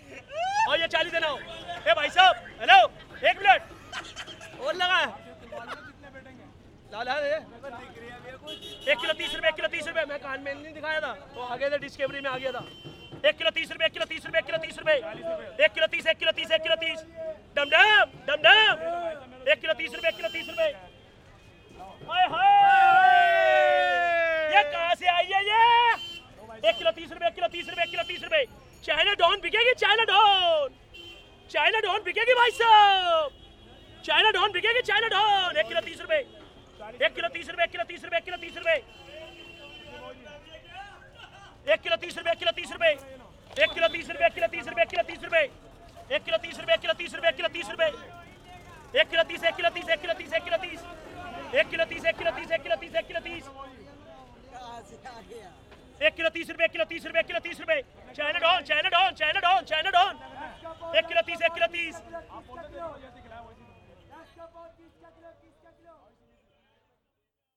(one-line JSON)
{"title": "Main Bazar Rd, Aram Bagh, Ratan Lal Market, Kaseru Walan, Paharganj, New Delhi, Delhi, Inde - New Deli - Pahar Ganj - le vendeur de Litchees", "date": "2008-05-23 17:00:00", "description": "New Deli - Pahar Ganj - le vendeur de Litchees", "latitude": "28.64", "longitude": "77.22", "altitude": "218", "timezone": "Asia/Kolkata"}